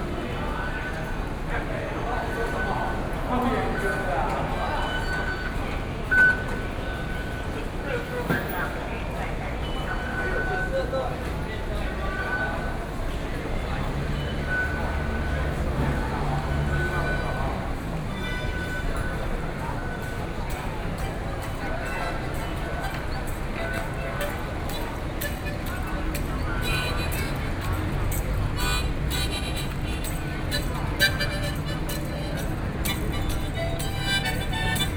Outside the station, Hot weather, Traffic Sound